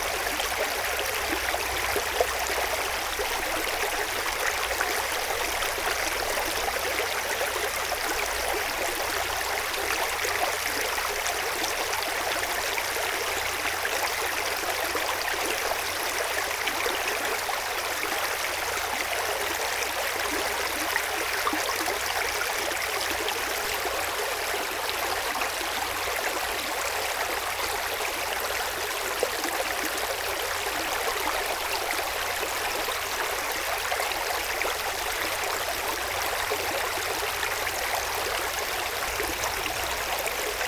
Genappe, Belgique - Ry d'Hez river
The Ry d'Hez river, flowing in a very bucolic landcape.